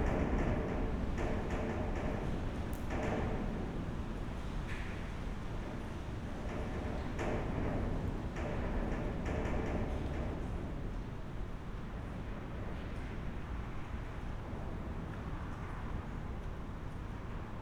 second try, a bit more distant.